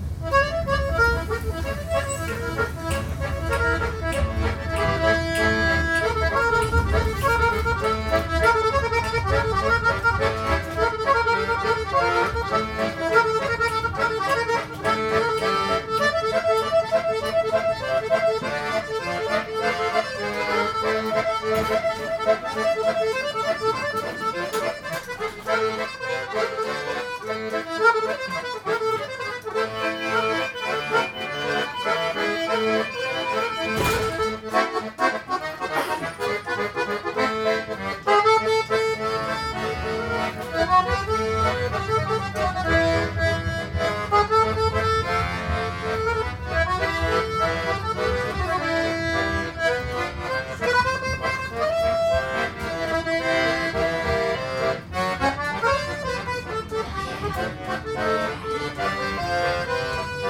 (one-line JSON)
{"title": "Moris, Saint-Gilles, Belgique - Accordionist in the tram 97", "date": "2022-03-26 16:30:00", "description": "Accordéoniste dans le tram 97.\nTech Note : Ambeo Smart Headset binaural → iPhone, listen with headphones.", "latitude": "50.82", "longitude": "4.35", "altitude": "79", "timezone": "Europe/Brussels"}